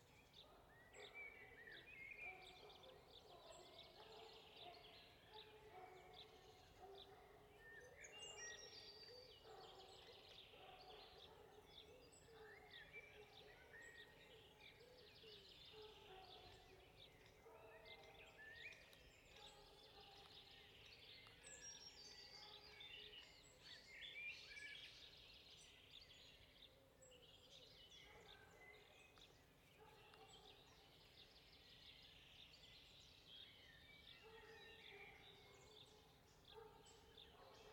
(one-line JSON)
{"title": "Seigy, France - Spring atmosphere", "date": "2021-04-29 18:30:00", "description": "Seigy, clearing wood oriented, late day with many nice birds\nby F Fayard - PostProdChahut\nSound Device 633, MS Neuman KM 140-KM120", "latitude": "47.25", "longitude": "1.39", "altitude": "109", "timezone": "Europe/Paris"}